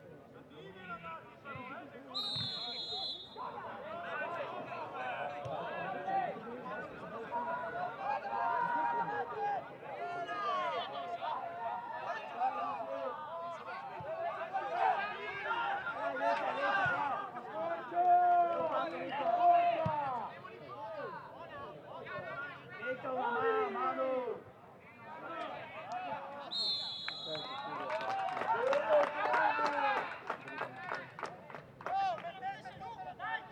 Duino-Aurisina TS, Italien - Duino-Aurisina - End of local soccer game
Local soccer game (Campionale regionale dilettanti, promozione girone B) between Sistiana Duino Aurisina (hosts) and Domio (guests). The game started at 4pm. Domio wins 2:1, no goals during the recording.
[Sony PCM-D100 with Beyerdynamic MCE 82]
Italy, 10 September 2016, 18:03